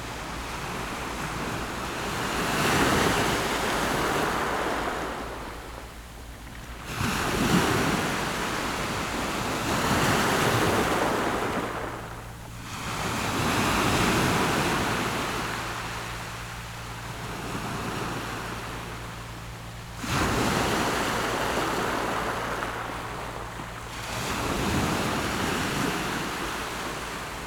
Su'ao Township, Yilan County - Sound of the waves
Sound of the waves, In the coastal
Zoom H6 MS+ Rode NT4
Yilan County, Suao Township, 宜42鄉道, 28 July 2014